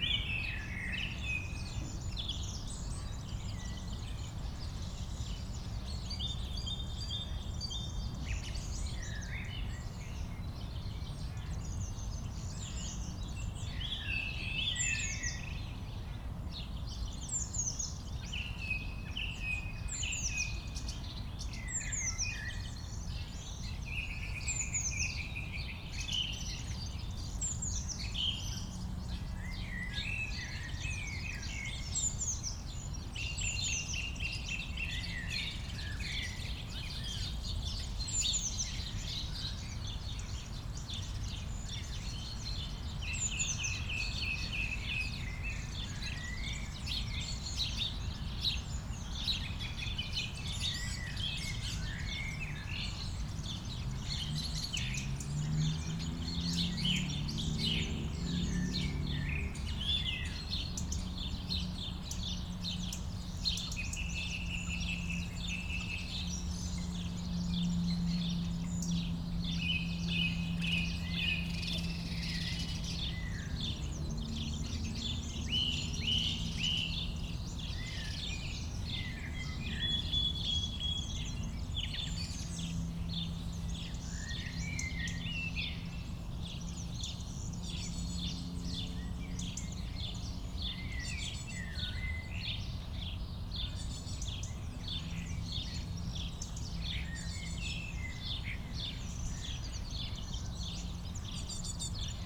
Friedhof Columbiadamm, Berlin, Deutschland - cemetery, spring ambience
cemetery, Friedhof Columbiadamm, Alter Garnisonsfriedhof, spring ambience, many bird live here.
(Sony PCM D50, DPA4060)
Berlin, Germany, 19 April 2019, 08:50